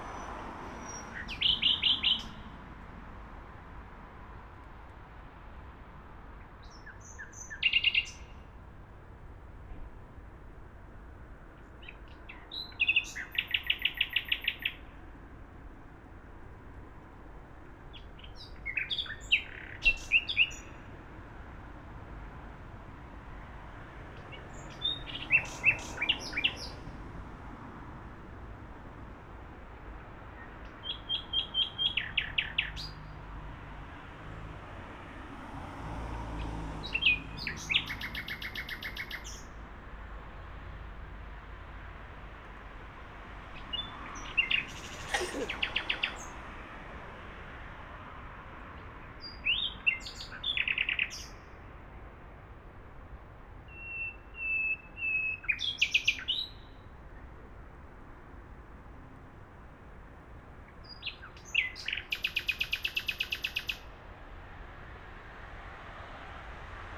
Kiefholzstraße / Mergenthalerring, Berlin - late Nightingale
on my way home I've heard this late Nightingale. The singers in June usually are lone males who couldn't manage to mate.
(SD702, AT BP4025)
Berlin, Germany, 15 June 2019